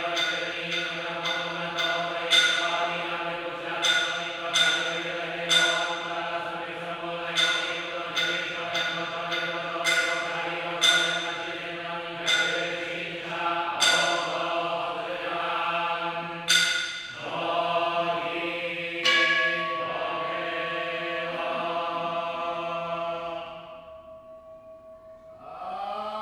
Japonia, Tōkyō-to, Taitō-ku, Nishiasakusa, 本堂浄土真宗東本願寺派東本願寺 - chanting
Buddhist monks doing their chants in a temple. (roland r-07)
26 September 2018, 13:10